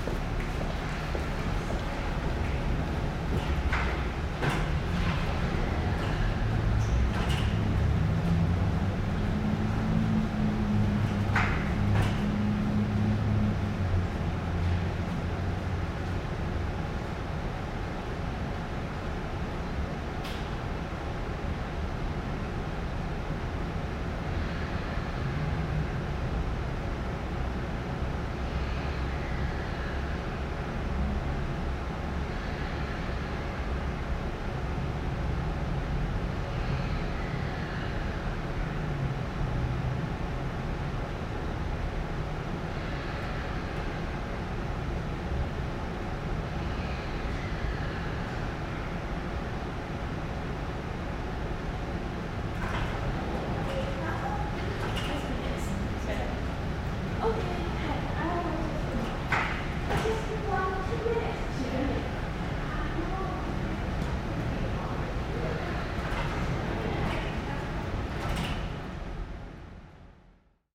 Calgary +15 1st St SW bridge
sound of the bridge on the +15 walkway Calgary